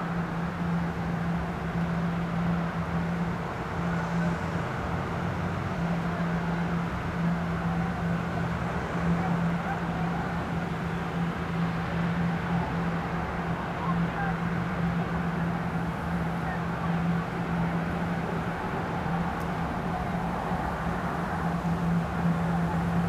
Maribor, Slovenia - city night ambience 7th floor
city heard at an open window, 7th floor of Maribor Hotel City. some drones and tones from unclear sources.
(PCM D-50)
2 September 2012